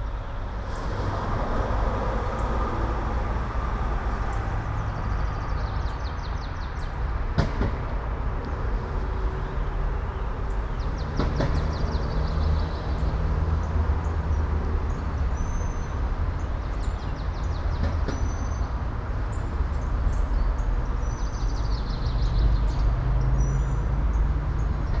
all the mornings of the ... - apr 12 2013 fri
Maribor, Slovenia, 12 April 2013, 07:21